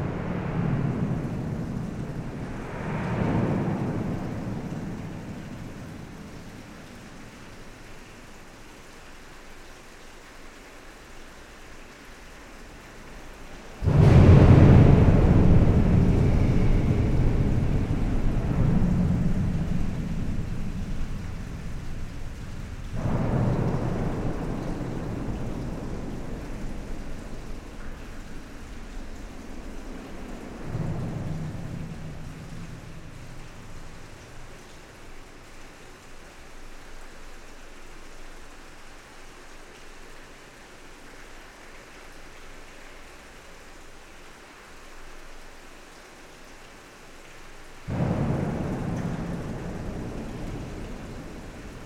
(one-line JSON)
{"title": "Dinant, Belgium - Charlemagne bridge", "date": "2017-09-29 10:25:00", "description": "Recording of the Charlemagne bridge from the inside. Reverb is very huge because of the long metallic caisson, where I walk. A bridge is not filled with concrete, its entierely empty.", "latitude": "50.24", "longitude": "4.92", "altitude": "159", "timezone": "Europe/Brussels"}